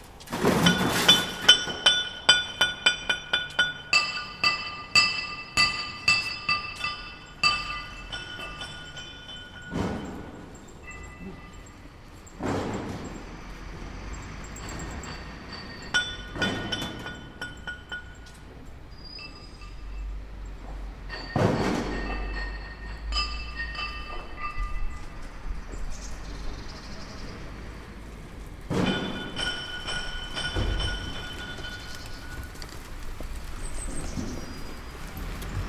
kunst beim bau, friedrichshain berlin
Berlin, Germany